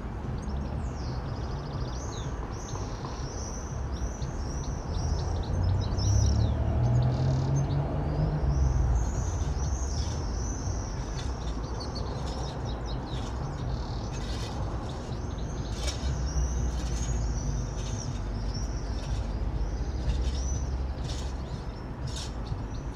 Halesworth market town; sounds of summer through the attic skylight - Rural town archetypical wildlife/human sound mix
From the attic skylight, swifts close by, work in the garden with radio playing, a goldfinch on the roof, traffic, chugging machine in the distant, a neighbour's canary sings from a cage.
England, United Kingdom, July 2021